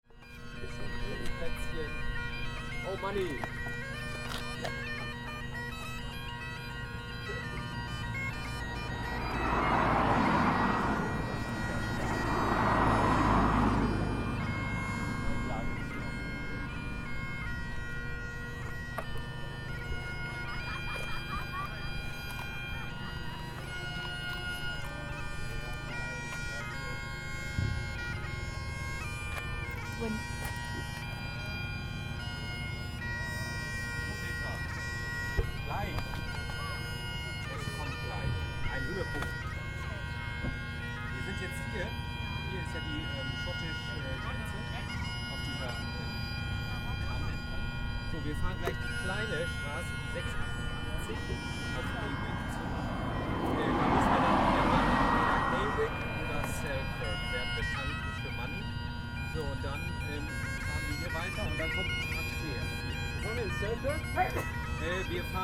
Scotland-England Border, UK - Borders, Carter Bar bagpiper
Windy hilltop viewpoint into Scotland, with bagpiper playing. German bikers discuss places they will visit in Border region. Burger van generator in background, some mic noise. Zoom H4N + windshield.
United Kingdom, European Union, 31 May 2013, ~12:00